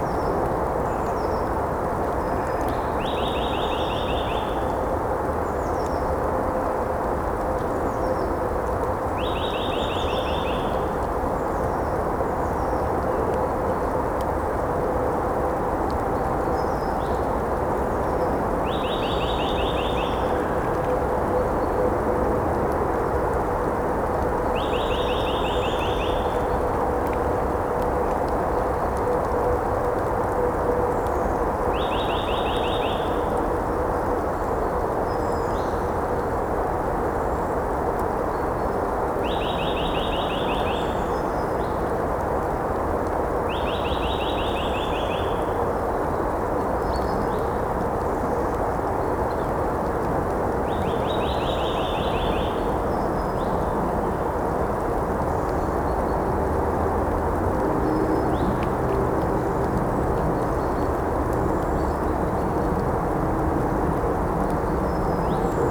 Ranst, Belgium - zevenbergen bos
recorded with H4n and 2 AKG C1000 originally for quadrofonic listenening
4 March